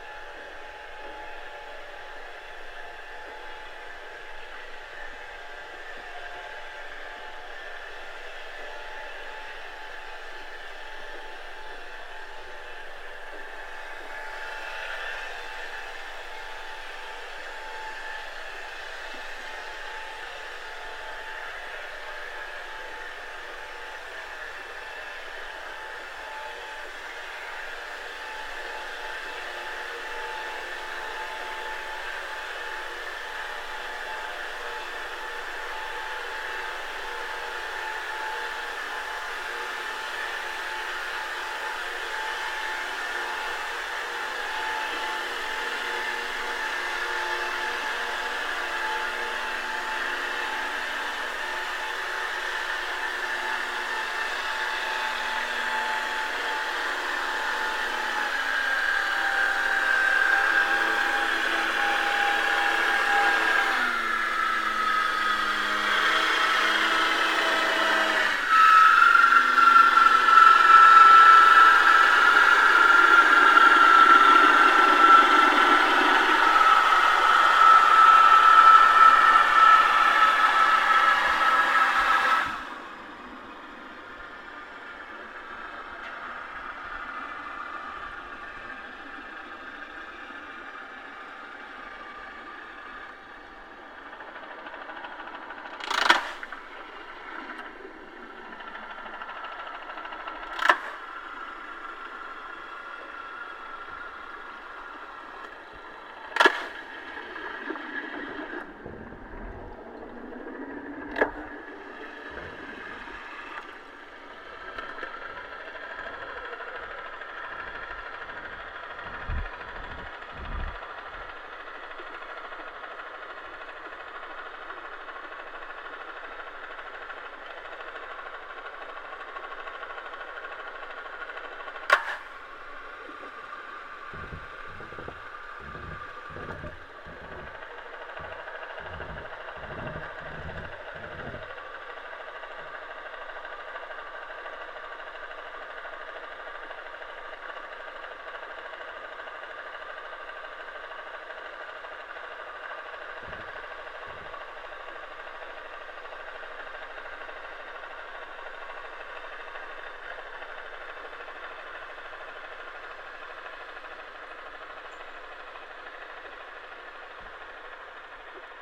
Kaliningrad, Russia, underwater recording of approaching boat
little motoric boat approaching. hydrophone recording
Kaliningrad, Kaliningradskaya oblast, Russia, 8 June